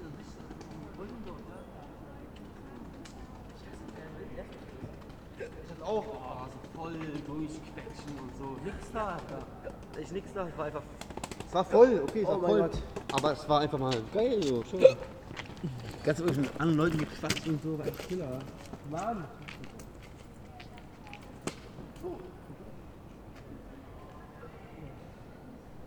Berlin: Vermessungspunkt Maybachufer / Bürknerstraße - Klangvermessung Kreuzkölln ::: 24.06.2010 ::: 01:32
Berlin, Germany, 24 June 2010